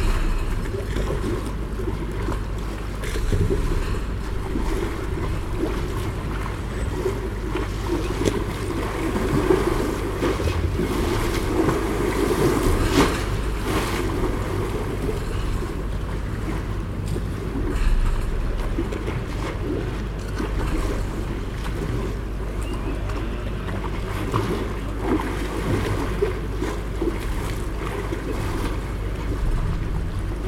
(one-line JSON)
{"title": "Embarkation, Furore guard engine and sound of waves Saint-Nazaire, France - Pier Saint-Nazaire", "date": "2021-02-20 18:20:00", "latitude": "47.27", "longitude": "-2.20", "altitude": "6", "timezone": "Europe/Paris"}